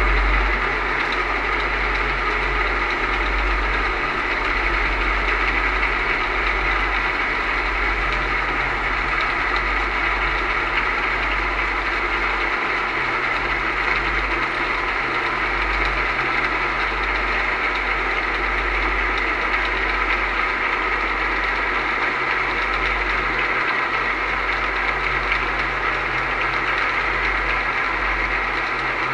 Speedwellstraat, Rotterdam, Netherlands - Underwater recording
Recording made using 2 hydrophones and 2 geofons attached to the handrail
7 March 2022, 16:00